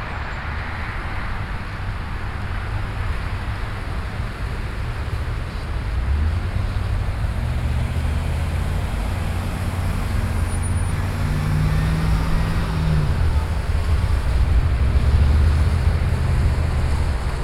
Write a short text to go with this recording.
USA, Texas, Austin, Crossroad, Road traffic, Binaural